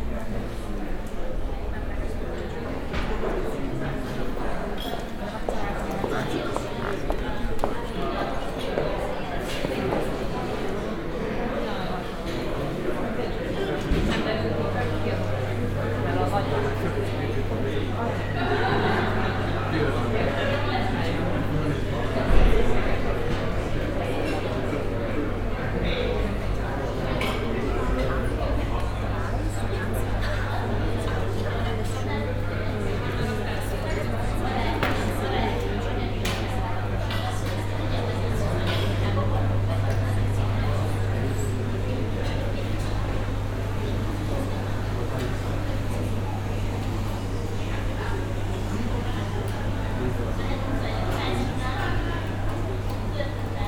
budapest, cafe central, indoor atmo
inside one of the famous traditional cafe places - here the central káveház
international city scapes and social ambiences